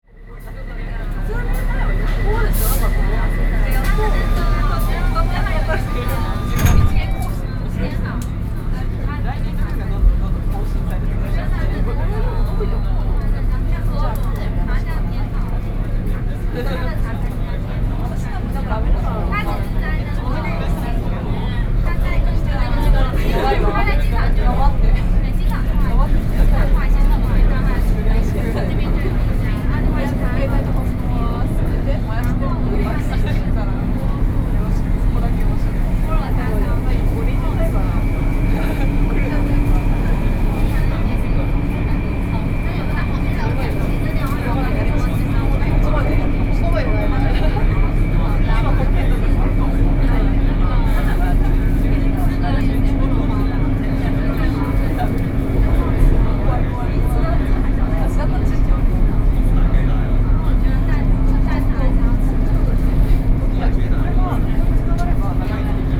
中山區劍潭里, Taipei City - soundmap20121117
Conversation sound on the MRT, sony pcm d50+OKM2